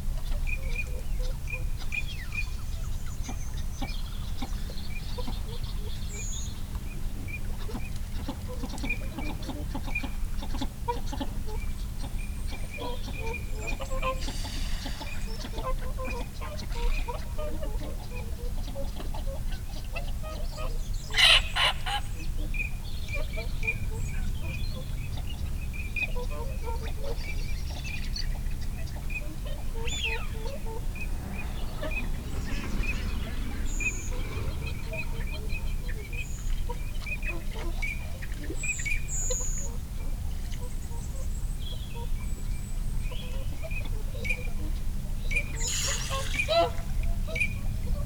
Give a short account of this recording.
teal call soundscape ... dpa 4060s clipped to bag to zoom f6 ... folly pond hide ... bird calls from ... snipe ... redwing ... whooper swan ... shoveler ... mute swan ... moorhen ... wigeon ... barnacle geese ... pink-footed geese ... time edited unattended extended recording ... background noise ...